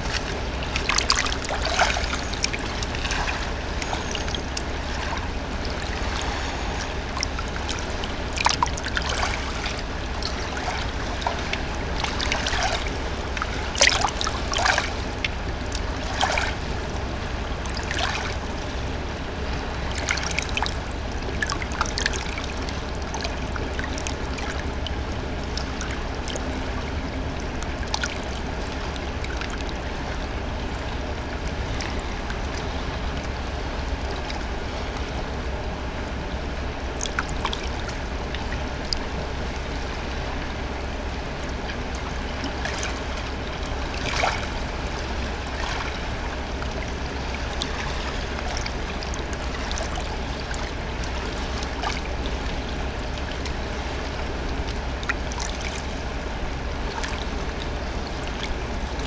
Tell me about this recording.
sitting on banks of the Mur close to the watermill